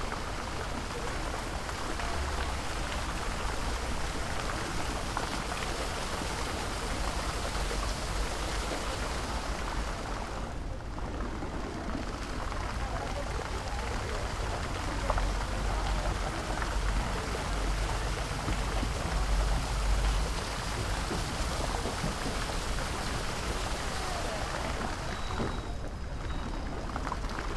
Ambience recording of a summer day in a city park near the fountain. Recorded with Sony PCM-D100.
województwo wielkopolskie, Polska, 19 July 2021, 15:10